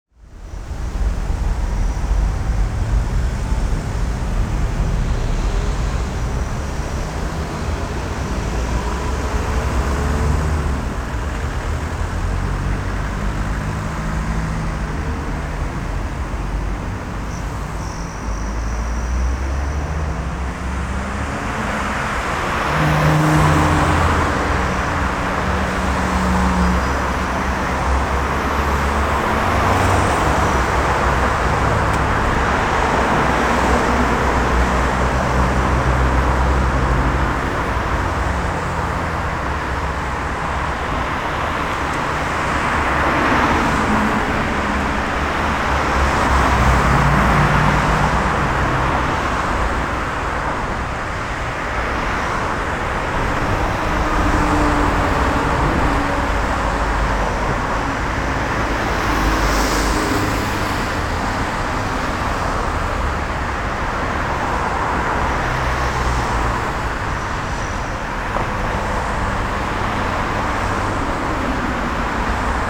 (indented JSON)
{
  "title": "Kunstmuseum Bonn, Friedrich-Ebert-Allee, Bonn, Deutschland - Bonn Friedrich Ebert Allee",
  "date": "2010-08-23 12:55:00",
  "description": "A \"classical\" modern soundscape in front of the Kunstmuseum Bonn",
  "latitude": "50.72",
  "longitude": "7.12",
  "altitude": "65",
  "timezone": "Europe/Berlin"
}